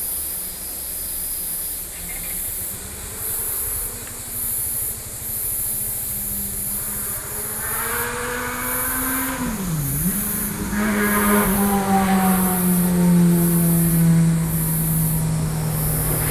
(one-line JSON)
{"title": "Yangjin Highway, 陽明山國家公園 - In the gazebo next to the road", "date": "2012-07-11 10:10:00", "description": "National park entrance, In the gazebo next to the road, Insects sounds, Traffic Sound\nSony PCM D50+ Soundman OKM II", "latitude": "25.20", "longitude": "121.59", "altitude": "211", "timezone": "Asia/Taipei"}